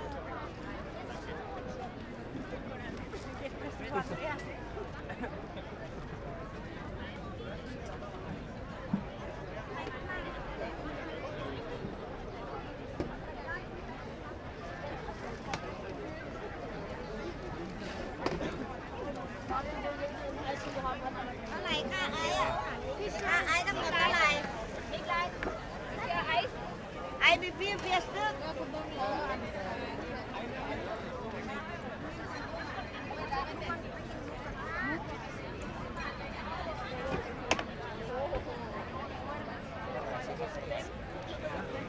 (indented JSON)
{
  "title": "Thaipark, Wilmersdorf, Berlin - people gathering for picknick",
  "date": "2017-05-21 16:35:00",
  "description": "Preussenpark / Thaipark, crowd of people gathring on a Sunday afternoon for a picknick, servrd by many sellers of asian food with improvised kitchens\n(Sony PCM D50, Primo EM172)",
  "latitude": "52.49",
  "longitude": "13.31",
  "altitude": "41",
  "timezone": "Europe/Berlin"
}